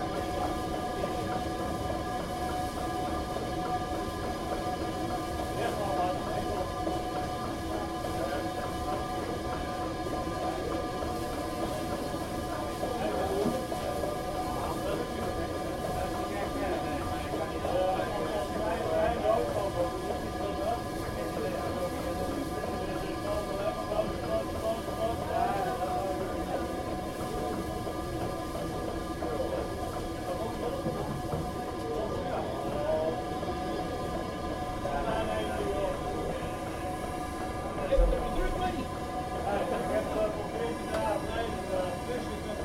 Windmill, Steenderen, Netherlands - Singing Millstone
microphone under millstone in windmill milling wheat and rye flour.
Recording made for the project "Over de grens - de overkant" by BMB con. featuring Wineke van Muiswinkel.
7 July 2018